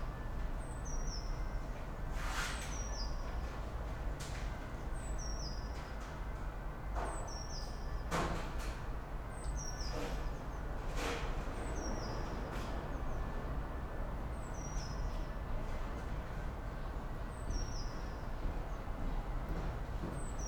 Berlin Bürknerstr., backyard window - friday afternoon
temperature has risen all week, new sounds are in the air, anticipation of spring. distant music from the nearby market, a woman dumps waste, voices, birds.
(tech: sony pcm d50 120°)
Berlin, Germany, 2 March 2012, 16:50